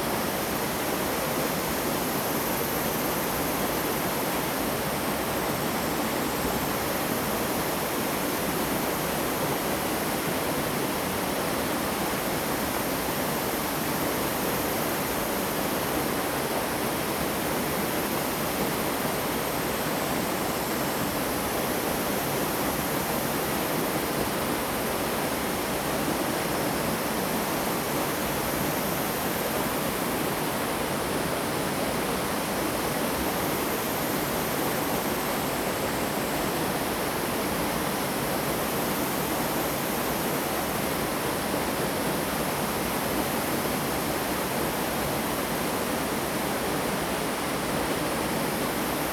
玉門關, 埔里鎮成功里 - River Sound
River Sound
Zoom H2n MS+XY